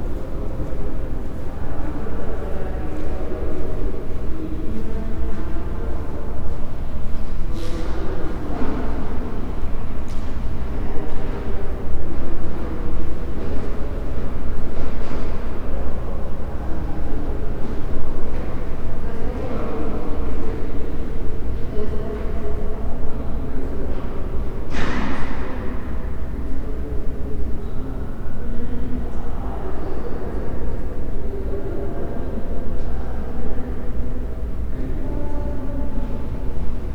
Prol, C. Calz. de los Heroes, La Martinica, León, Gto., Mexico - Museo de Arte e Historia de Guanajuato, sala Luis García Guerrero.
An everyday day in the Luis García Guerrero room, of the Museum of Art and History of Guanajuato. People are heard walking past the current exhibit and commenting on it. Also one of the guides gives information to a group of visitors.
I made this recording on june 3rd, 2022, at 1:27 p.m.
I used a Tascam DR-05X with its built-in microphones and a Tascam WS-11 windshield.
Original Recording:
Type: Stereo
Un día cotidiano en la sala Luis García Guerrero, del Museo de Arte e Historia de Guanajuato. Se escucha la gente pasando por la exposición actual y comentando al respecto. También a uno de los guías dando información a un grupo de visitantes.
Esta grabación la hice el 3 de junio de 2022 a las 13:27 horas.
Guanajuato, México, 3 June 2022, 13:27